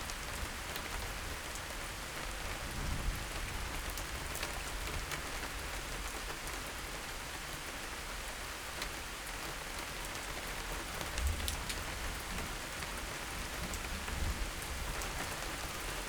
Letschin, Bahnhof, station, waiting for the train, listening to the rain
(Sony PCM D50, DPA4060)